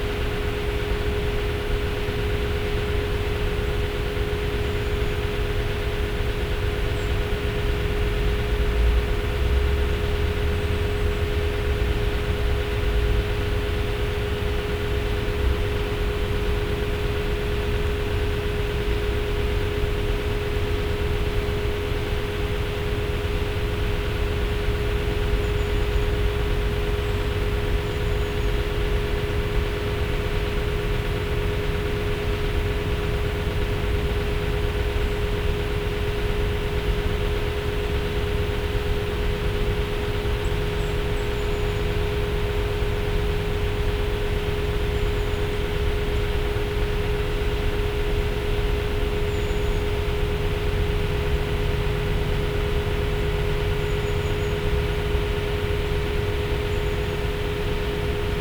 Lithuania, Utena, strange industrial sound
biking through the forest Ive heard this industrial sound